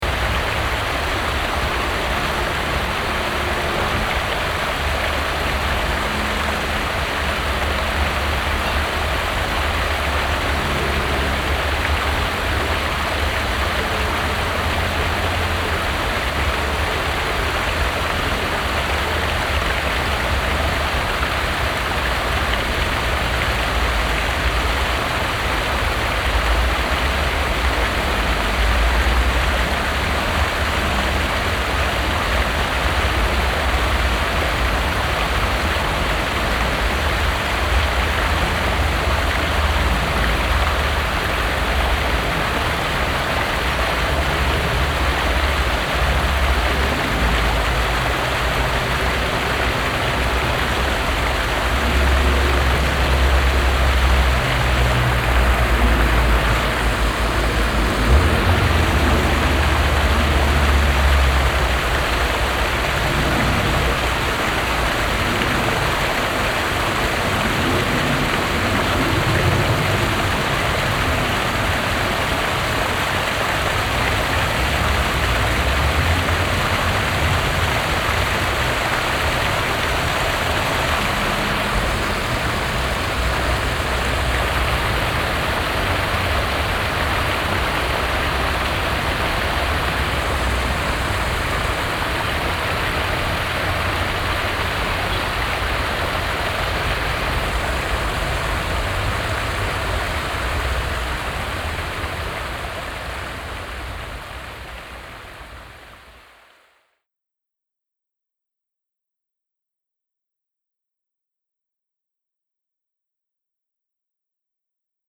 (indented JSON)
{
  "title": "Borbeck - Mitte, Essen, Deutschland - essen, schloß borbeck, lake with fountains",
  "date": "2014-04-16 15:20:00",
  "description": "Auf einer Veranda am Teich des Schloß Borbeck. Der Klang von drei Wasserfontänen und einem kleinen Seezufluß. Im Hintergrund Verkehrsgeräusche von der Schloßstraße.\nAt the small lake of Schloß Borbeck. The sound of three water fountains and a small stream running into the lake. In the distance traffic from the Schloßstreet.\nProjekt - Stadtklang//: Hörorte - topographic field recordings and social ambiences",
  "latitude": "51.47",
  "longitude": "6.94",
  "altitude": "71",
  "timezone": "Europe/Berlin"
}